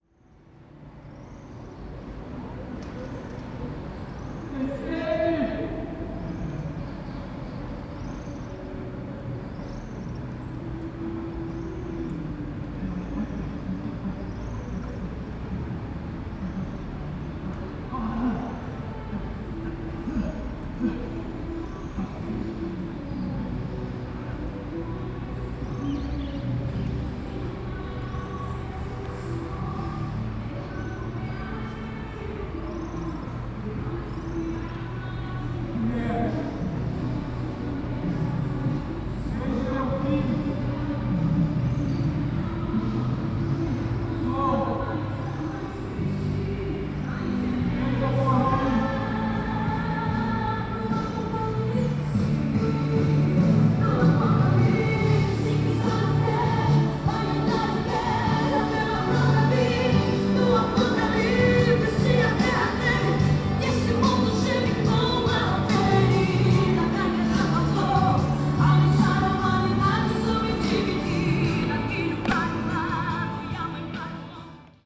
Calçadão de Londrina: Encenação: Paixão de Cristo - Encenação: Paixão de Cristo / Staging: Passion of Christ
Panorama sonoro: encenação teatral da Paixão de Cristo na Praça Marechal Floriano Peixoto, ao lado da Catedral, por um grupo de atores. Os atores utilizavam microfones conectados à caixas de som. Várias pessoas acompanhavam a encenação e um grupo de religiosos entregava folhetos aos presentes. Um ciclista equipado com caixa de som passava pelo Calçadão emitindo música evangélica em grande intensidade.
Sound panorama:
Theatrical staging of the Passion of Christ in Marechal Floriano Peixoto Square, next to the Cathedral, by a group of actors. The actors used microphones connected to the speakers. Several people accompanied the staging and a group of religious handed out leaflets to those present. A cyclist equipped with a sound box passed by the boardwalk emitting gospel music in great intensity.